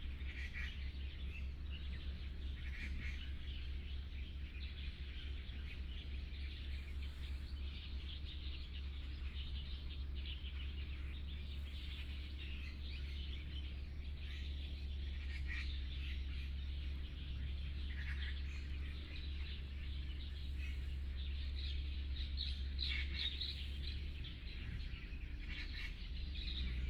豐田里, Taitung City - Train traveling through
Under the bridge, Birdsong Traffic Sound, Train traveling through